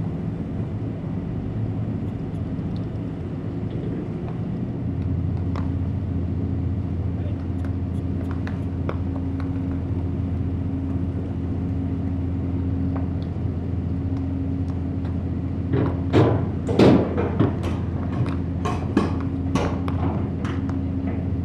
{
  "title": "Yainville, France - Yainville ferry",
  "date": "2016-09-17 10:15:00",
  "description": "The Yainville ferry is charging horses. The animals are very very tensed because of the boat noise.",
  "latitude": "49.46",
  "longitude": "0.82",
  "altitude": "3",
  "timezone": "Europe/Paris"
}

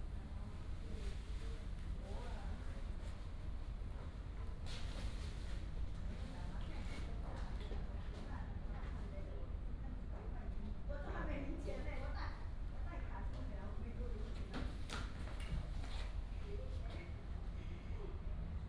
{
  "title": "Dadu Station, 台中市大肚區 - At the station platform",
  "date": "2017-02-27 13:06:00",
  "description": "At the station platform, The train passes by",
  "latitude": "24.15",
  "longitude": "120.54",
  "altitude": "12",
  "timezone": "Asia/Taipei"
}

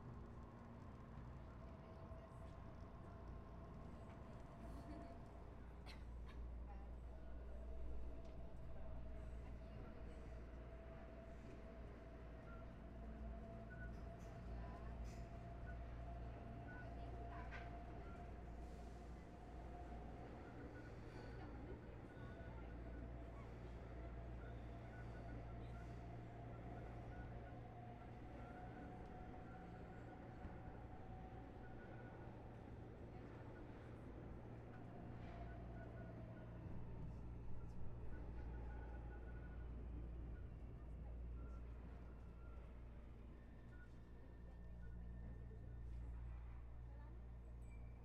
{
  "title": "Praça da Sé - Sé, São Paulo - SP, 01016-040, Brasil - Estação da Sé",
  "date": "2019-05-03 16:24:00",
  "description": "Gravamos dentro de um vagão da estação da sé, próximo ao horário de pico.",
  "latitude": "-23.55",
  "longitude": "-46.63",
  "altitude": "769",
  "timezone": "America/Sao_Paulo"
}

